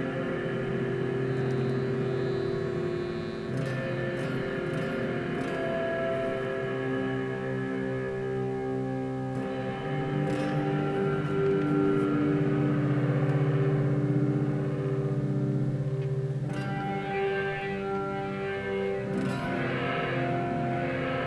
Zagreb, Hrvatska - Ispod mosta
Glazbenici Jurica Pačelat, Ivan Šaravanja i Vedran Živković sviraju uz lokalni soundscape parka Maksimir. Ideja je da tretiraju soundscape kao još jednog glazbenika i sviraju uz njega, ne imitirajući ga. Snimka je uploadana kao primjer za tekst diplomskog rada za studij Novih Medija na Akademiji likovnih umjetnosti sveučilišta u Zagrebu.Tin Dožić